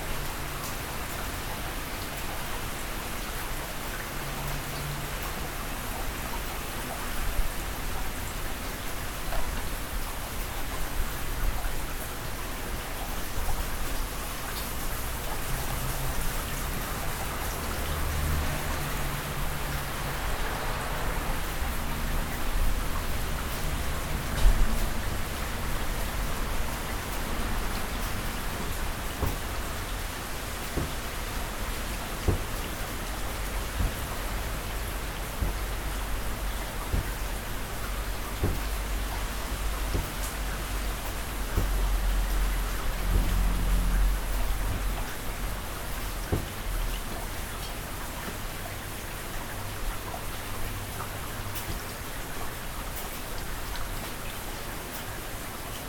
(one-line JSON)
{"title": "refrath, lustheide, rain on the balcony", "latitude": "50.95", "longitude": "7.11", "altitude": "68", "timezone": "Europe/Berlin"}